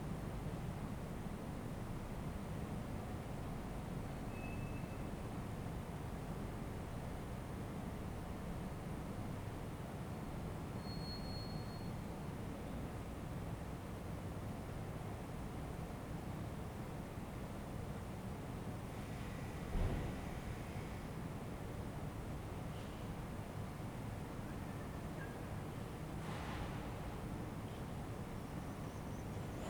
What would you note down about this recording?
"High summer stille round midnight in the time of COVID19" Soundscape, Chapter CLXXIX of Ascolto il tuo cuore, città. I listen to your heart, city, Sunday, August 24th 2021; more then one year and four months after emergency disposition (March 10th 2020) due to the epidemic of COVID19. Start at 00:11 a.m. end at 00:58 p.m. duration of recording 47'00''.